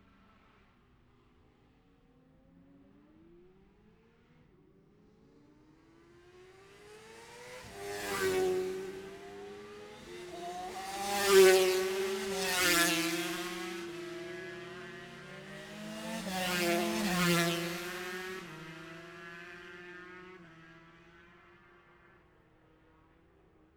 Jacksons Ln, Scarborough, UK - Gold Cup 2020 ...
Gold Cup 2020 ... 2 & 4 strokes Qualifying ... dpas bag MixPre3 ... Monument Out ...